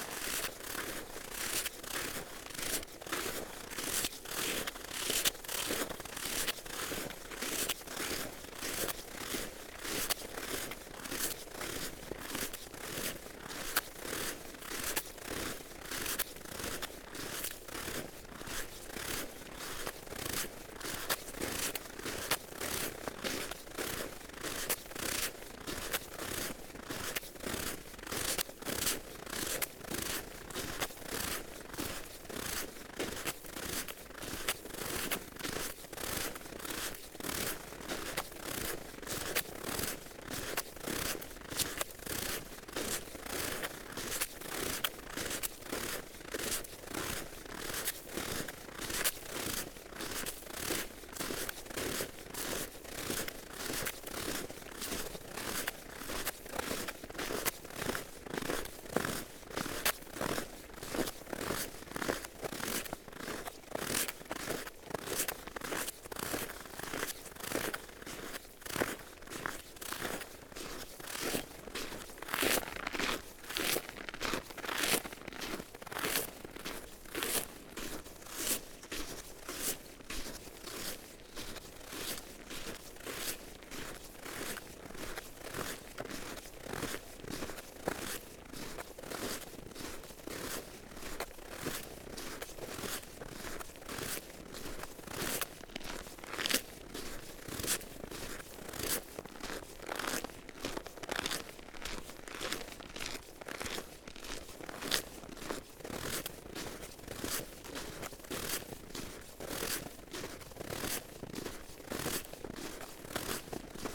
Green Ln, Malton, UK - walking on frozen snow and ice ...
walking on frozen snow and ice ... parabolic ...